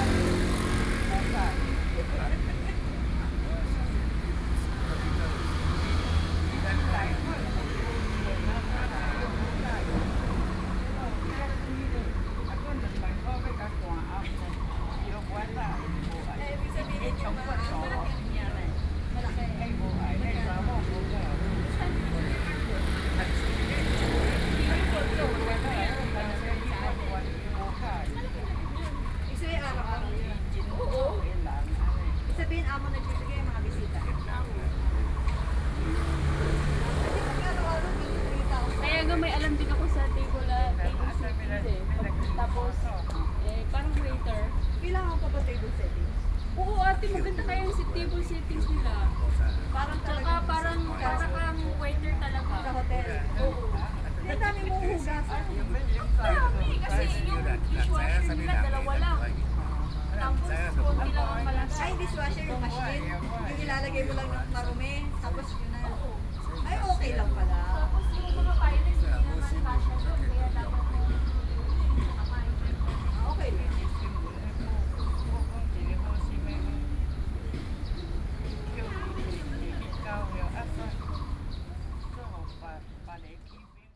{"title": "林口運動公園, Linkou Dist., New Taipei City - in the Park", "date": "2012-07-04 08:45:00", "description": "in the Park, Birds sound, Traffic Sound, Aircraft flying through\nSony PCM D50+ Soundman OKM II", "latitude": "25.07", "longitude": "121.37", "altitude": "265", "timezone": "Asia/Taipei"}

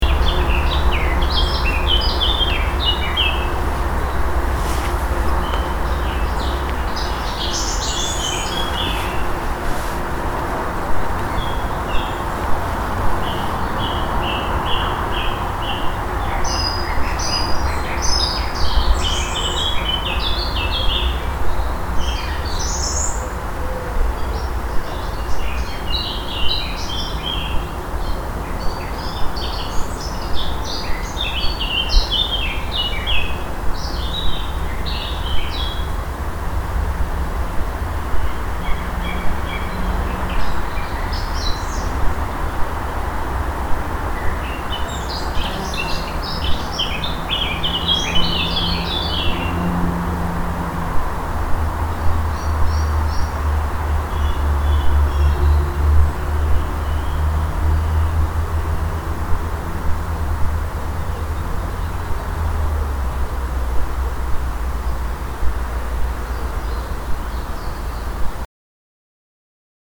{"title": "Dove Stone House, Holmfirth Rd, Greenfield, Oldham, UK - Birds in the trees", "date": "2021-06-11 21:04:00", "description": "Zoom H1 - Birds singing in the trees on a warm summer evening", "latitude": "53.54", "longitude": "-1.97", "altitude": "295", "timezone": "Europe/London"}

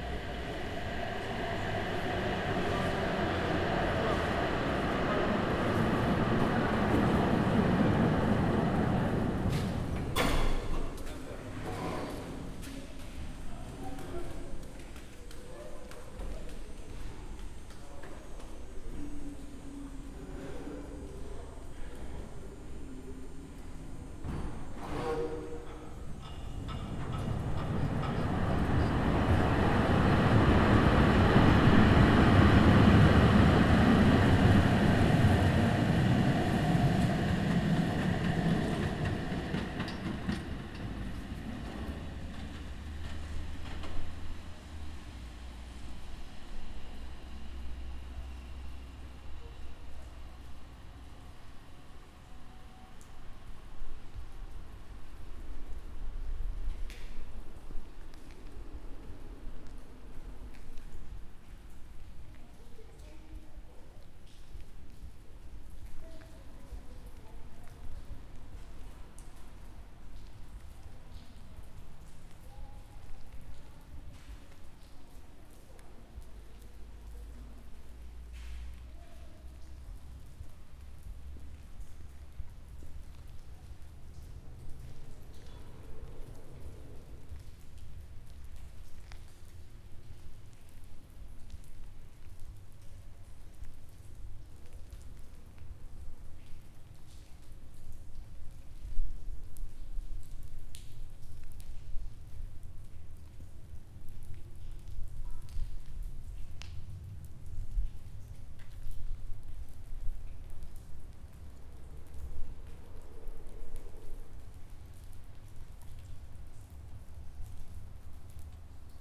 {"title": "Poznan, Piatkowo district, Szymanowskiego tram stop, night trams", "date": "2010-07-18 00:40:00", "description": "night trams ariving and departing, water drops dripping from the overpass above", "latitude": "52.46", "longitude": "16.92", "altitude": "91", "timezone": "Europe/Warsaw"}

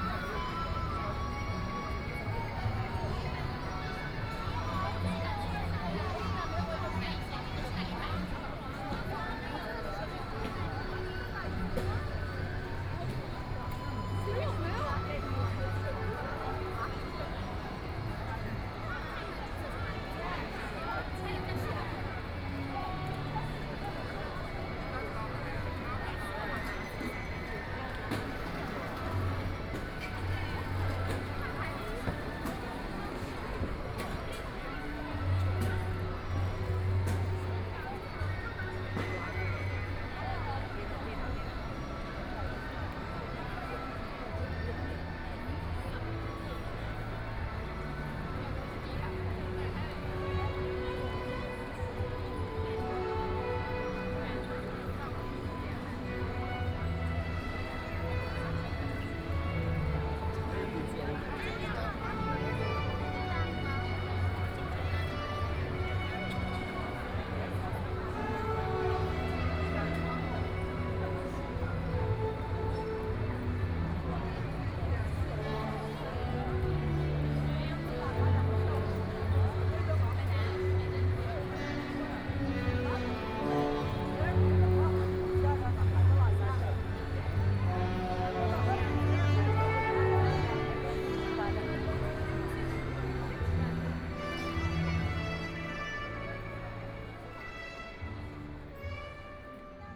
{"title": "Nanjin Road, Shanghai - Business Store hiking area", "date": "2013-11-23 17:46:00", "description": "walking in the Business Store hiking area, Very many people and tourists, Binaural recording, Zoom H6+ Soundman OKM II", "latitude": "31.24", "longitude": "121.47", "altitude": "18", "timezone": "Asia/Shanghai"}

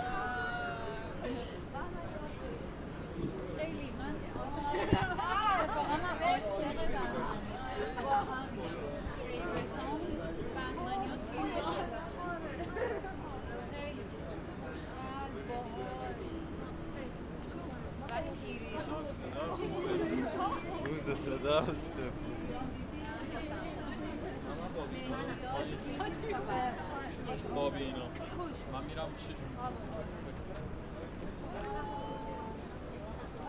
{"title": "Tehran Province, Tehran, District, Valiasr St, No., Iran - RooBeRoo Mansion ambience with people chatting", "date": "2017-02-17 21:45:00", "latitude": "35.70", "longitude": "51.41", "altitude": "1201", "timezone": "Asia/Tehran"}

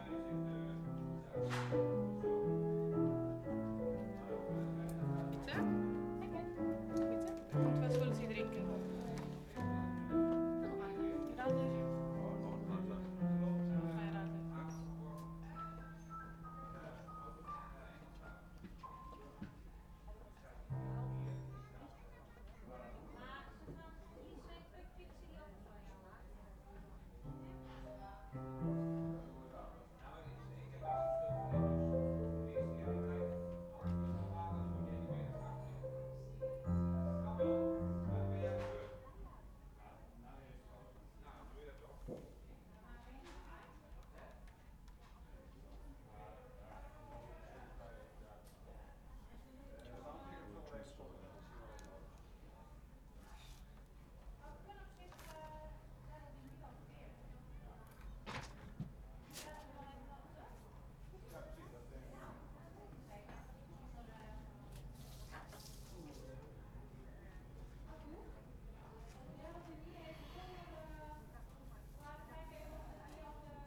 {"title": "workum: camping site restaurant - the city, the country & me: sound check", "date": "2015-08-01 19:23:00", "description": "sound check of a band, some tourists on the terrace of the restaurant\nthe city, the country & me: august, 1", "latitude": "52.96", "longitude": "5.41", "timezone": "Europe/Amsterdam"}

